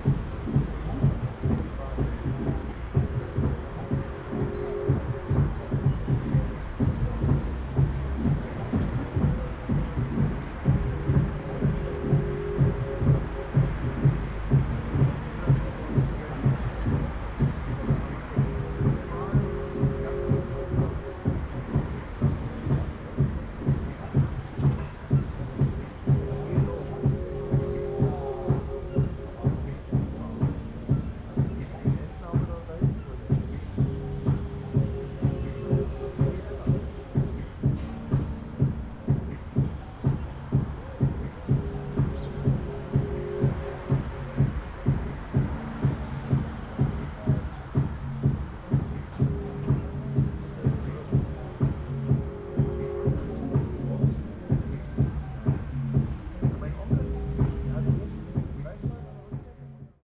{"title": "berlin, am flutgraben: club der visionäre - the city, the country & me: ducks busy with their plumage in the landwehrkanal at club der visionäre", "date": "2008-06-22 16:10:00", "description": "the city, the country & me: june 14, 2008", "latitude": "52.50", "longitude": "13.45", "altitude": "36", "timezone": "Europe/Berlin"}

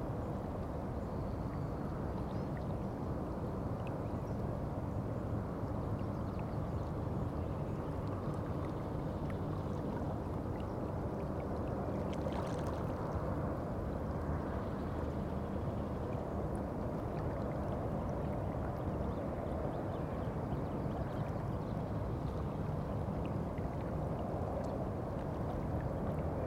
{"title": "Bernalillo County, NM, USA - Monday Morning Traffic On The River", "date": "2016-08-08 07:38:00", "description": "Rio Grande en el bosque accessed via Bachechi Open Space. Despite effort to escape traffic sound from Alameda and Coors Boulevard i.e. hiking further; location noisy. In addition to zero water flow on the east fork of the island at this time of the year, set-up difficult. Recorded on Tascam DR-100 mk II, levels adjusted on Audacity.", "latitude": "35.20", "longitude": "-106.63", "altitude": "1525", "timezone": "America/Denver"}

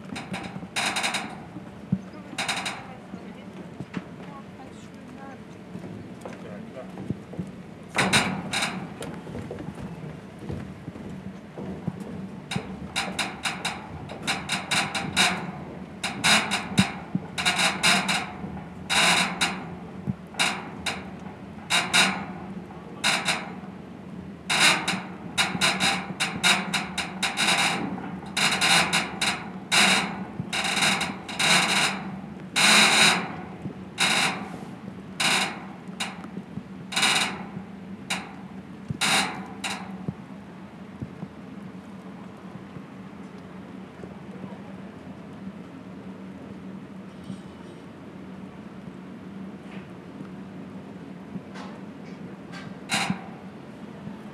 {"title": "Monheim (Rhein), Deusser Haus / Marienkapelle, Monheim am Rhein, Deutschland - Monheim am Rhein - Schiffsanleger", "date": "2022-06-16 14:40:00", "description": "Am Monheimer Schiffsanleger - das Geräusch der Metallplattform bewegt durch den Rhein, Schritte, Regentropfen und Stimmen von Passanten\nsoundmap NRW", "latitude": "51.10", "longitude": "6.88", "altitude": "30", "timezone": "Europe/Berlin"}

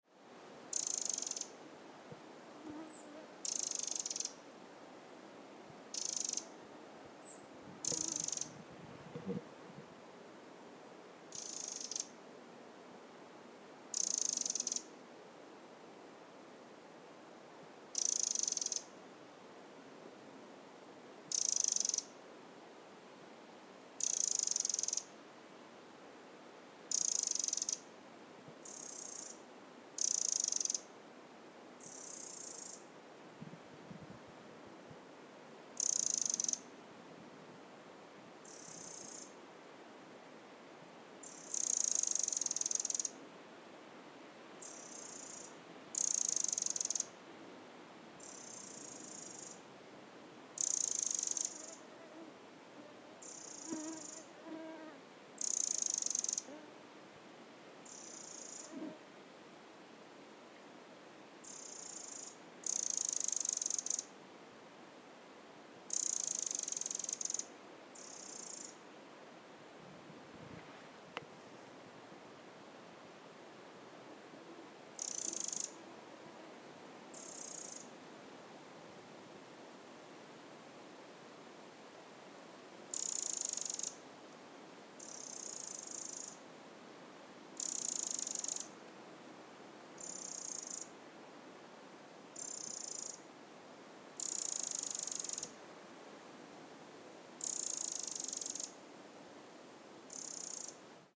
{"title": "in the forest, Arkhangelsk Region, Russia - in the forest", "date": "2011-08-29 16:21:00", "description": "In the forest.\nRecorded on Zoom H4n.\nВ лесу.", "latitude": "64.53", "longitude": "39.14", "altitude": "71", "timezone": "Europe/Moscow"}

